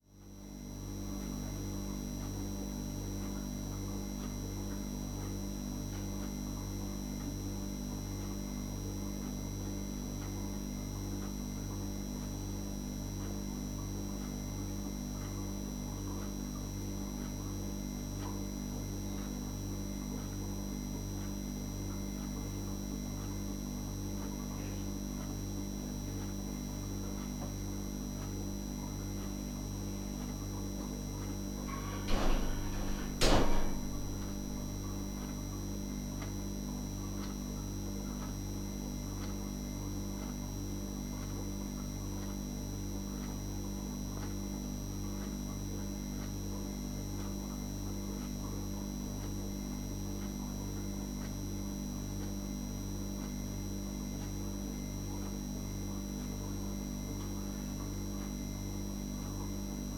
Maribor, Medvedova, Babica
night time at grandma house, fridge and clock sounds
Maribor, Slovenia, 20 November